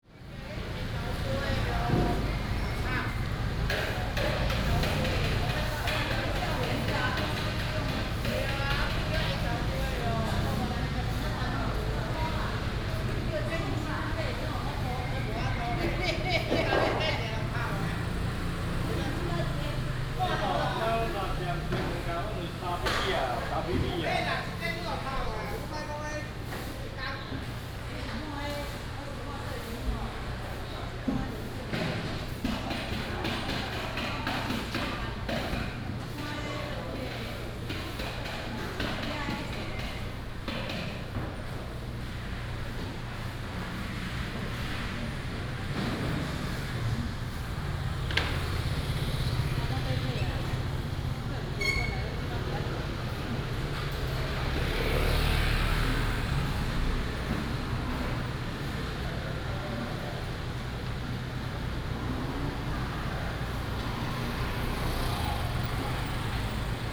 遊園路二段203巷1弄, Dadu Dist., Taichung City - the vendors are packing up
the vendors are packing up, in the Traditional Markets, traffic sound, Binaural recordings, Sony PCM D100+ Soundman OKM II
Taichung City, Taiwan